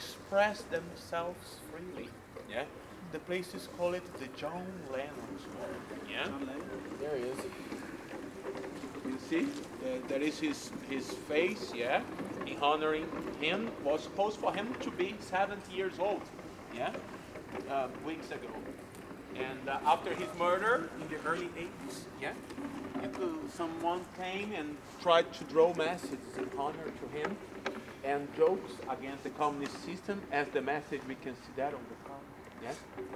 Prague, guided tour passing John Lennon Wall
John Lennon Wall in Malastrana, a colourful and well visited place. A guided tourist tour comes rolling by on android walk-without-moving devices and gets a short explanation while trying not to fall off the vehicles.
4 November 2010, 15:39